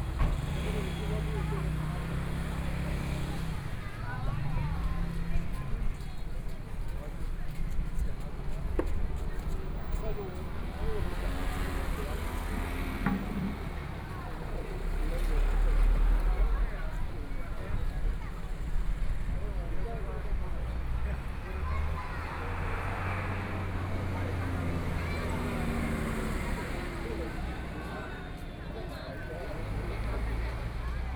Sec., Douyuan Rd., Erlin Township - At the intersection
At the intersection, Entrance in traditional markets, Traffic Sound, Zoom H4n+ Soundman OKM II
Erlin Township, Changhua County, Taiwan, January 2014